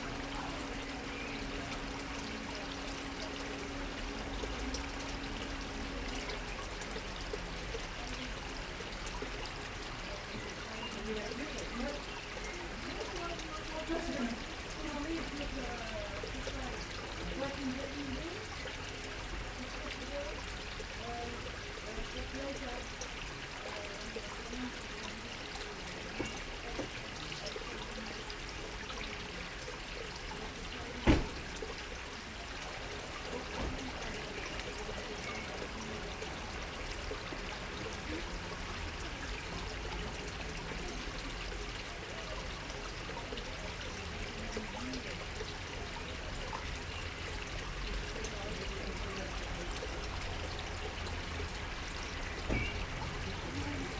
Lavoir Saint Léonard Honfleur (A1)
Lavoir Saint Léonard à Honfleur (Calvados)